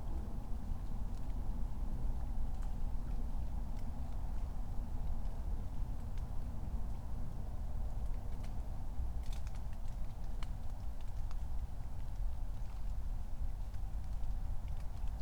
Königsheide, Berlin - forest ambience at the pond
Part 1 of a 12h sonic observation at Königsheideteich, a small pond and sanctuary for amphibia. Recordings made with a remote controlled recording unit. Distant city drone (cars, S-Bahn trains etc.) is present more or less all the time in this inner city Berlin forest. Drops of rain
(IQAudio Zero/Raspberry Pi Zero, Primo EM172)
Deutschland, 23 May 2020